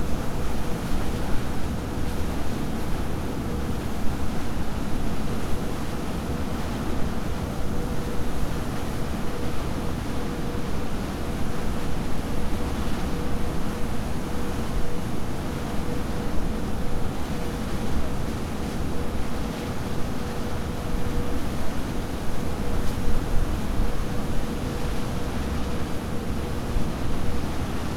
Europa - Fairy to Norway
The recording is of an engine, that is not only nice to listen to but also makes quite a show, as it pumps alot of Water into the air.